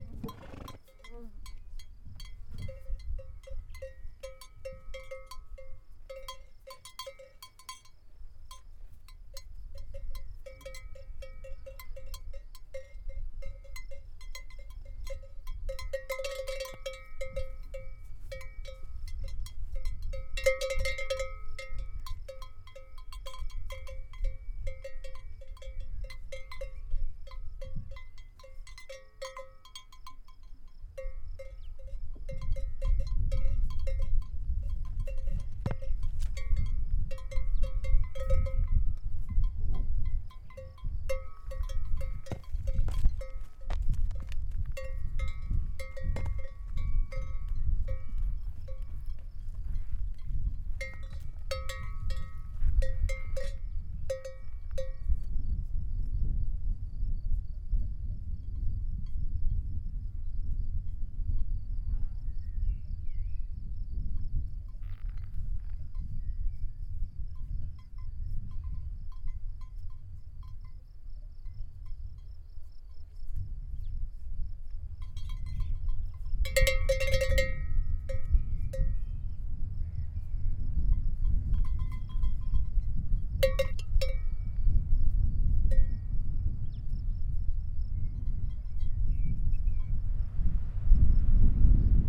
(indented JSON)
{
  "title": "loading... - Hrose in the mountain",
  "date": "2021-05-23 14:32:00",
  "description": "A horse with a bell on its neck is eating grass up in the Balkan mountain while some flies keep it company. Recorded with a Zoom H6 with the X/Z microphone.",
  "latitude": "42.95",
  "longitude": "24.28",
  "altitude": "1171",
  "timezone": "Europe/Sofia"
}